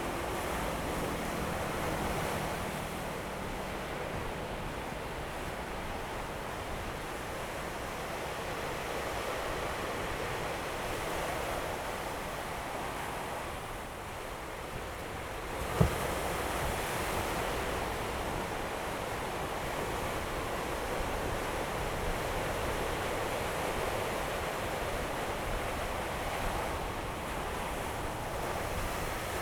和平里, Chenggong Township - Sound of the waves
Sound of the waves, on the rocky shore, Very hot weather
Zoom H2n MS+ XY
Chenggong Township, Taitung County, Taiwan, 6 September